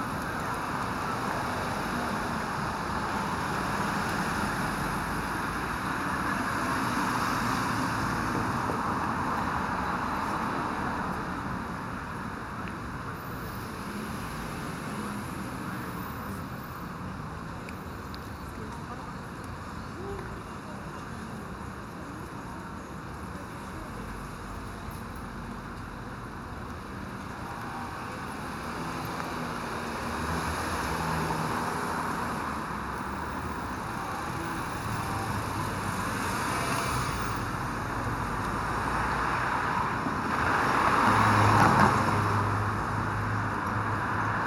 Avenue du Mont-Royal O, Montréal, QC, Canada - Busy Crossroad and whistling man, evening
Mont Royal ave, Zoom MH-6 and Nw-410 Stereo XY